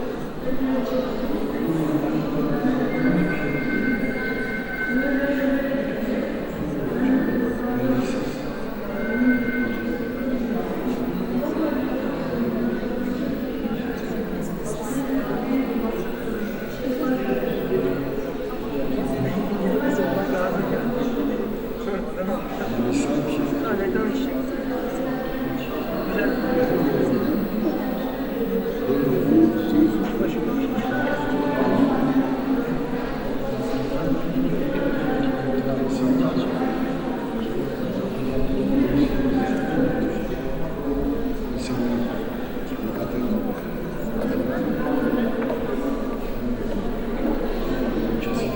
cologne, museum ludwig, media works - cologne, museum ludwig, kutlug Ataman - media installation
media works at the contemporary art museum ludwig in cologne